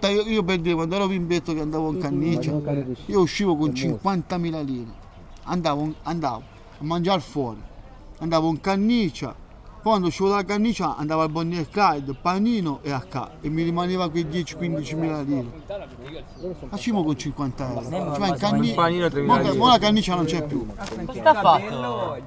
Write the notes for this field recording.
Ogni giorno i ragazzi della borgata si ritrovano alle panchine davanti al campo da calcetto. Più o meno a qualsiasi ora c'è qualcuno. Parlano, discutono, ridono, commentano i fatti della borgata e quello che succede nel mondo e nella loro vita.